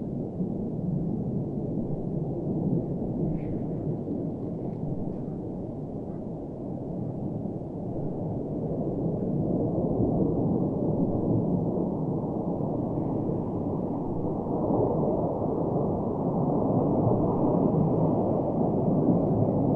neoscenes: F/A 18s arrive overhead
UT, USA, 28 April 2010